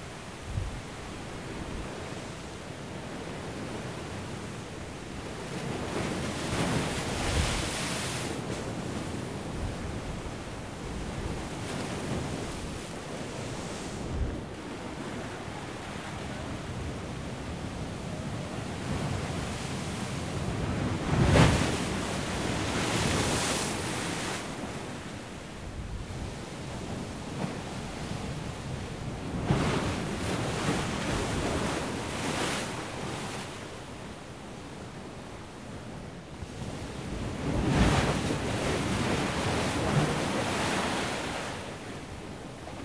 Vaux-sur-Mer, Royan, France - waves impact [Royan]
Vagues deferlentes contres les rochers .
Waves impact against rocks .
15 October